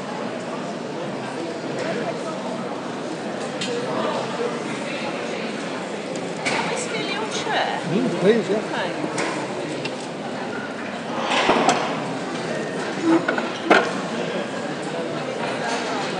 At lunchtime during the In The Field symposium at the British Library I sat in the library cafe eating my ham and egg sandwich. Within seconds of pressing record a lady approached me and asked "Can we steal your chair". An interesting collection of words - 'we' as in not just her and 'steal' as in taking something that belongs to someone else.
Recorded on iPhone 5 with 'iSaidWhat?!' App. Trimmed and saved in Audacity.
London Borough of Camden, UK, 16 February 2013, 13:16